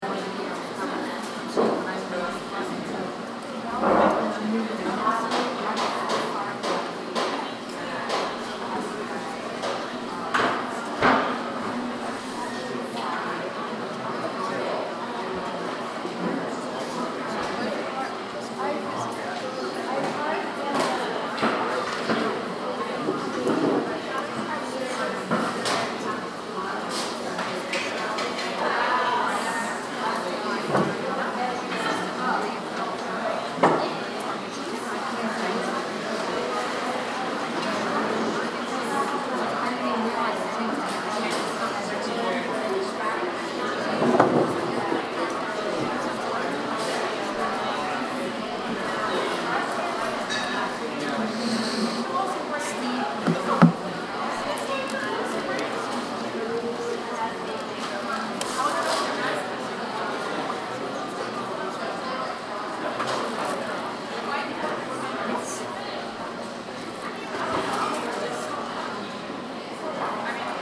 Element 93 Cafe is a food service option for the SUNY New Paltz community. The recording was taken using a Snowball condenser microphone and edited using Garage Band on a MacBook Pro. The recording was taken during a busy time of day